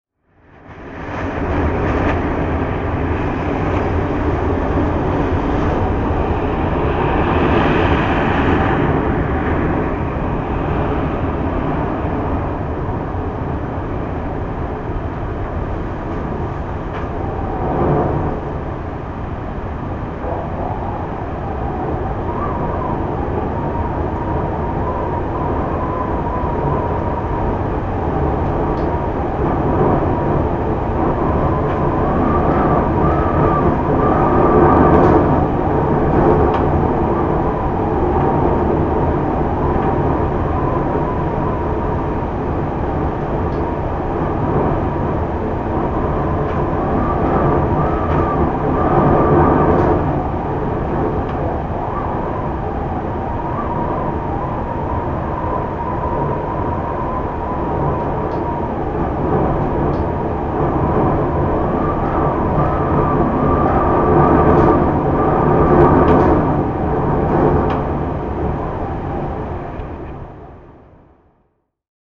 Midnight windstorm in Longyearbyen, Svalbard - Longyearbyen, Svalbard
Windstorm over Longyearbyen, Svalbard